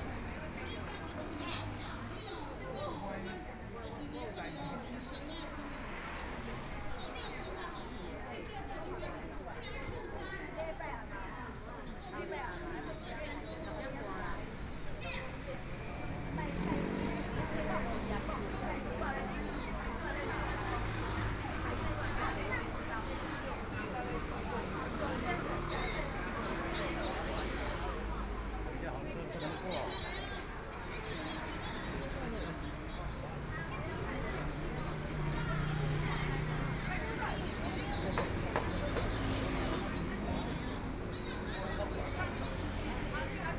Gangshan Rd., Taipei City - soundwalk
walking on the Road, Traffic Sound, Fireworks and firecrackers
Please turn up the volume
Binaural recordings, Zoom H4n+ Soundman OKM II
Taipei City, Taiwan, 21 February 2014, 20:37